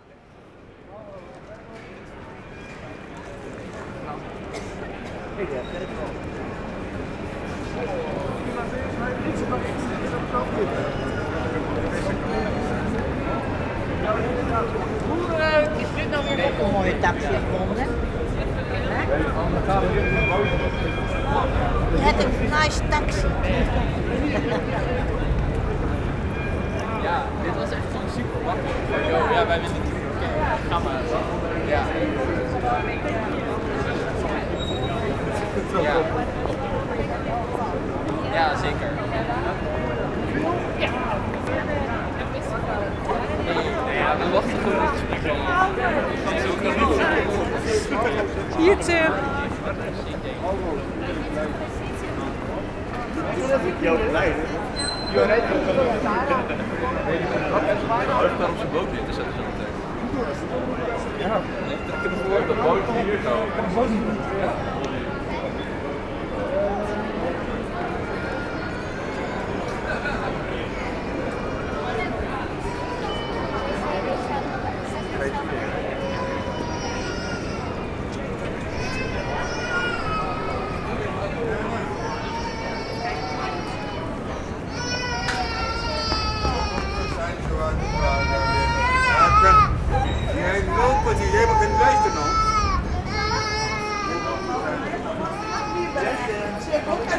Den Haag, Nederlands - Den Haag station
Den Haag station. One person playing the station piano.